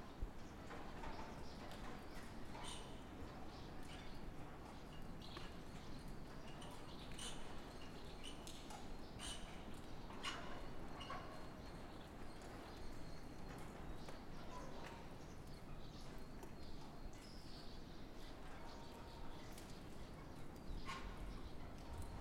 Buzludzha, Bulgaria, inside hall - Buzludzha, Bulgaria, large hall 4
Part four of the concert of remnants of the house of communism